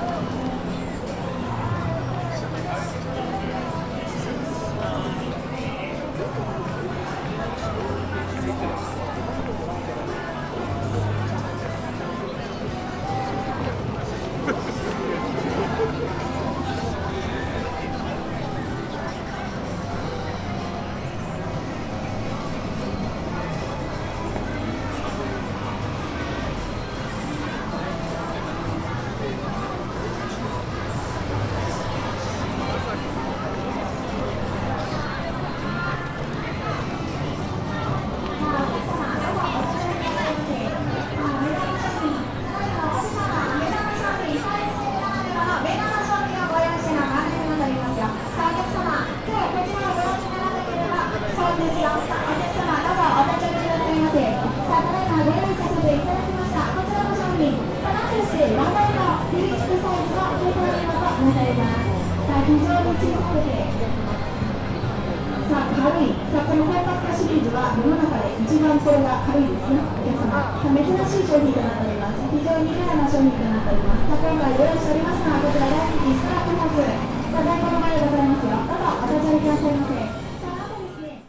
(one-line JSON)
{"title": "Tokyo, Chiyoda, Yurakucho - bustling alley", "date": "2013-03-26 21:37:00", "description": "sounds from one of the alleys in busy Ginza district, lots of people around, restaurants and bars as well as pachinko parlors are full, streets vibrant with night life.", "latitude": "35.67", "longitude": "139.76", "altitude": "18", "timezone": "Asia/Tokyo"}